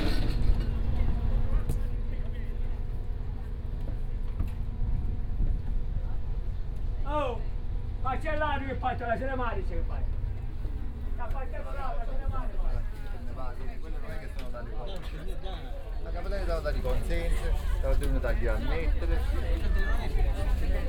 milazzo, harbour - aliscafo ferry leaving
the ustica aliscafo ferryboat is leaving to the island of lipari
Milazzo ME, Italy, 18 October, 16:50